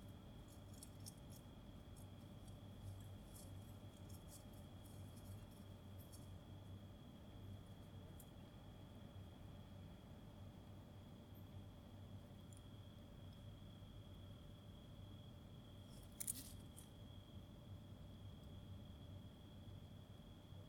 Panepistimioupoli, Voutes, Greece - Ratworks
What you listen is the sound of rats, as they get inside and outside the bushes, in order to eat. They were attracted by maccaroni with cheese. The recorder was placed just beside the bait, and several weird noises can be heard, including a squeak during 3.28, and some chewing later on. A noise reduction filter was applied, and an amplification of the audio. The rats where of the rattus norwegicus species.